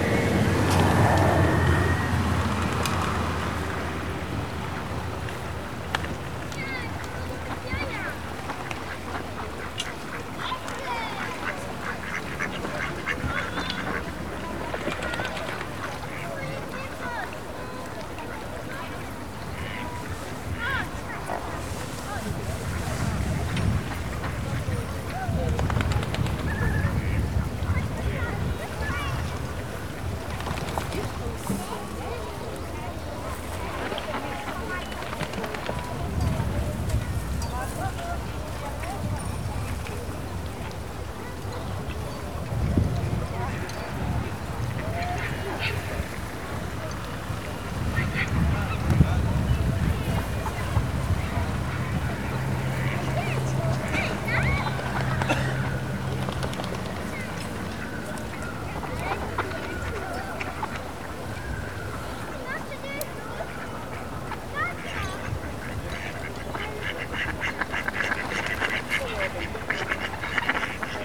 Poznan, Morasko, UAM Campus UAM - at the frozen pond
a few dozen ducks and a few swans occupying a frozen pond. lots of strollers around the area due to very warm Sunday.